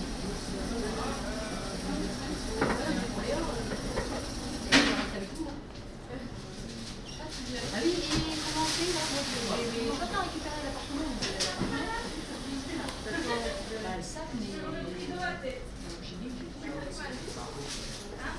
After a long day of walking I ended up in a bakery for a 'chausson au pomme' and a coffee. ’Paul’ is an international chain of bakery restaurants established in 1889 in the city of Croix, near Lille, in Northern France.
Lille, France, August 2016